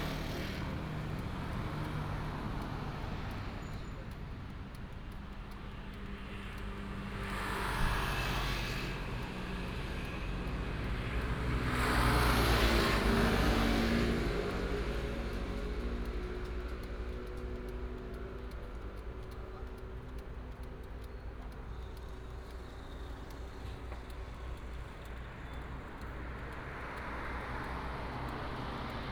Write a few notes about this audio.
Night shop, Night outside the convenience store, Traffic sound, Frog croak, Binaural recordings, Sony PCM D100+ Soundman OKM II